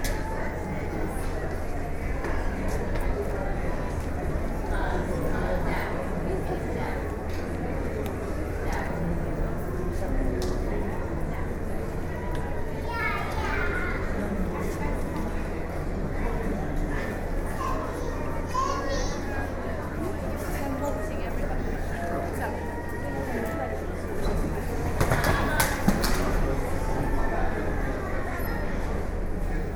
General foyer voices, discussion, play, music, coming and going.
Recorded on Edirol R09HR